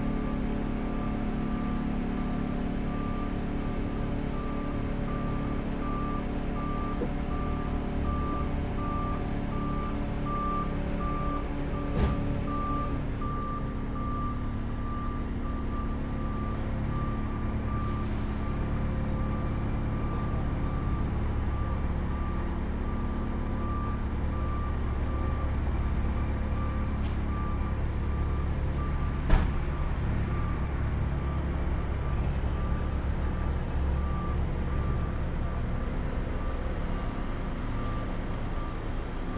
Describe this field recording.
Stereo recording of a service collecting glass garbage. Recorded with Rode NT4 on Sound Devices Mix-Pre6 II.